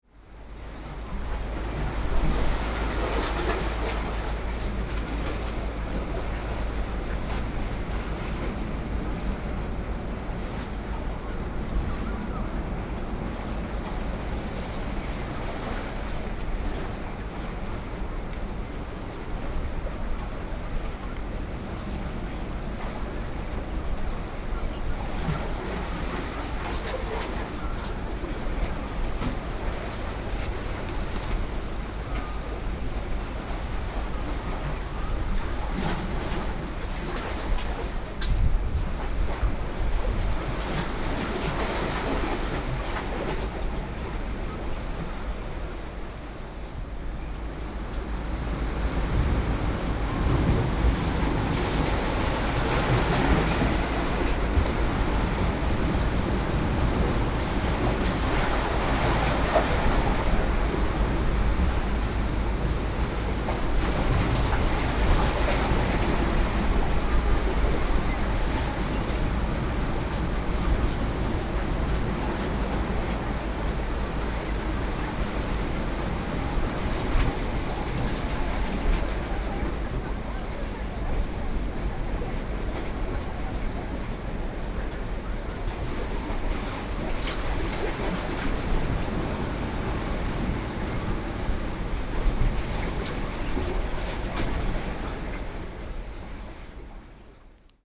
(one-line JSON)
{"title": "璜港漁港, 萬壽里 Jinshan District - At the quayside", "date": "2012-07-11 08:16:00", "description": "At the quayside, sound of the waves\nZoom H4n+Rode NT4(soundmap 20120711-28 )", "latitude": "25.23", "longitude": "121.65", "altitude": "7", "timezone": "Asia/Taipei"}